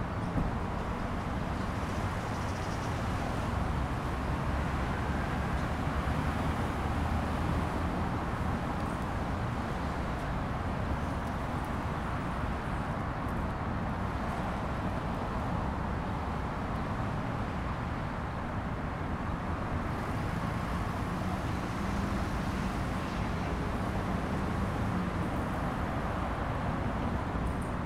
Mt Royal Ave, Baltimore, MD, USA - Bells
Bells signaling 6 o'clock as well as local traffic. Recorded using the onboard Zoom H4n microphones.